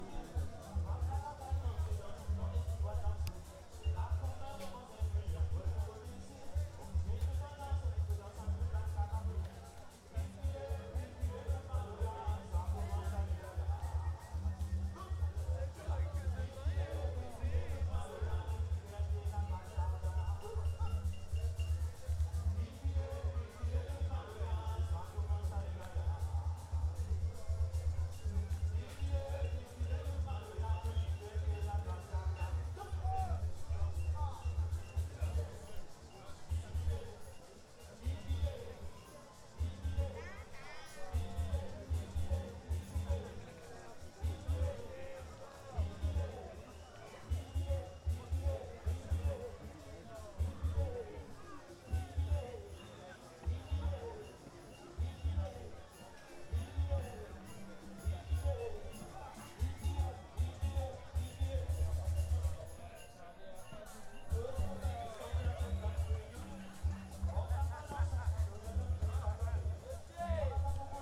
Marla, Cirque De Mafat, Réunion - Sound système
Field recording using stereo ZOOM H4N of "Sound système" small music festival in the town of Marla in Cirque de Mafate. Ambience of crowd and sounds of Maloya band playing in the distance.
Reunion